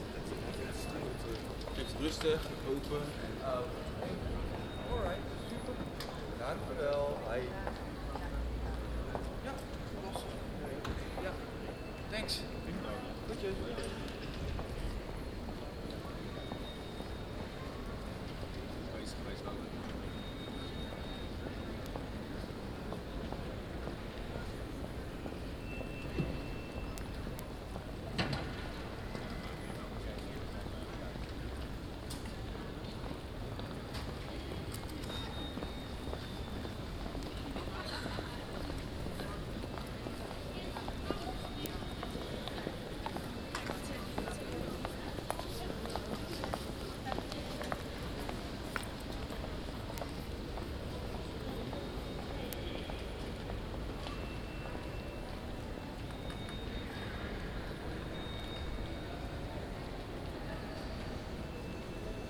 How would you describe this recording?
Atrium City Hall in The Hague. People waiting in que. Employees leaving the building. Recorded with a Zoom H2 with additional Sound Professionals SP-TFB-2 binaural microphones.